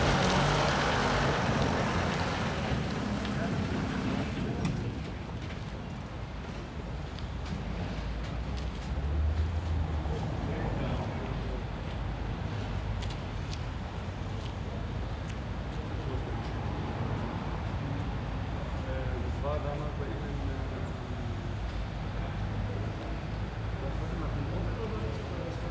Koloniestraße, Berlin, Deutschland - Koloniestraße, Berlin - hammering and flame-cutter on the scrapyard, passers-by
Koloniestraße, Berlin - hammering and flame-cutter on the scrapyard, passers-by. Some use this narrow path as a shortcut between Koloniestraße and Holzstraße. Besides the workers on the scrapyard you can also hear some men being picked up from the nearby mosque after the mass.
[I used the Hi-MD-recorder Sony MZ-NH900 with external microphone Beyerdynamic MCE 82]
Koloniestraße, Berlin - Hämmern und Schweißen auf dem Schrottplatz, Passanten. Manche benutzen diesen schmalen Weg als Abkürzung zwischen der Kolonie- und der Holzstraße. Abgesehen von den Arbeitern auf dem Schrottplatz kann man auch einige der Männer hören, die sich vor der nahen Moschee abholen lassen, nachdem die Messe gerade zuende ist.
[Aufgenommen mit Hi-MD-recorder Sony MZ-NH900 und externem Mikrophon Beyerdynamic MCE 82]
Berlin, Germany, 12 October 2012